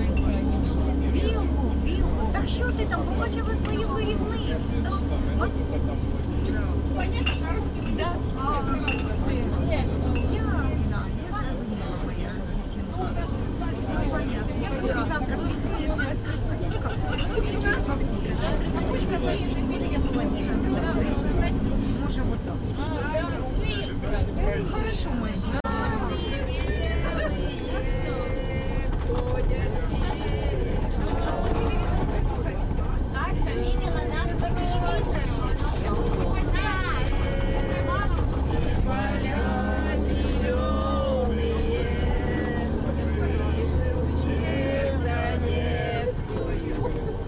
Беседы в салоне и пение песен пассажирами